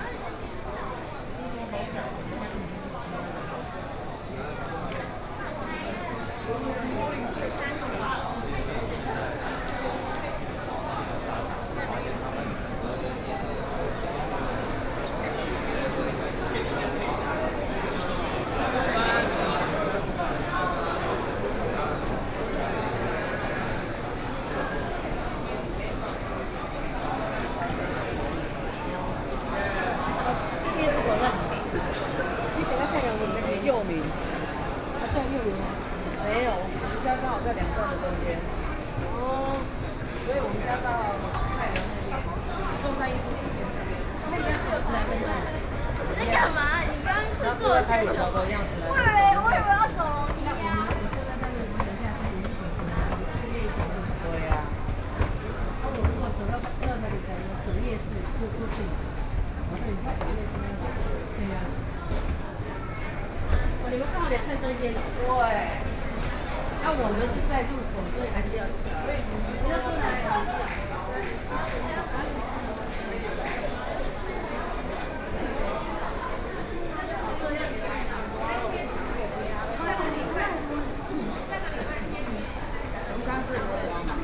Zhongxiao W. Rd., Taipei - Soundwalk
Underground shopping street ground, from Station to Chongqing S. Rd. Binaural recordings, Sony PCM D50 + Soundman OKM II
Taipei City, Taiwan, 12 October 2013